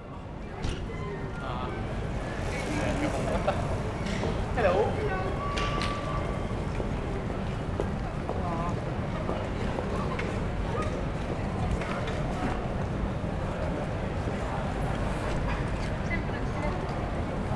Kauppurienkatu, Oulu, Finland - Friday evening at the centre of Oulu
One of the busiest pedestrian intersections in Oulu during a warm summer evening. Lots of happy people going by as it's friday. Zoom h5, default X/Y module.